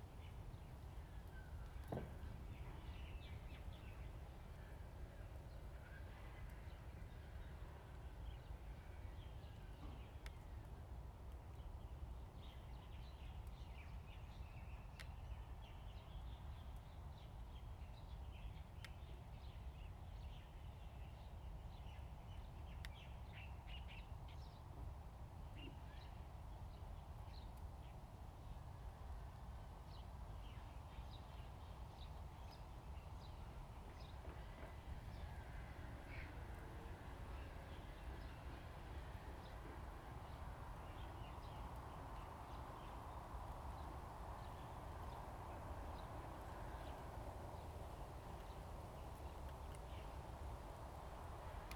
陵水湖水鳥保護區, Lieyu Township - Waterfowl Sanctuary
Waterfowl Sanctuary, Birds singing, Forest, Wind
Zoom H2n MS +XY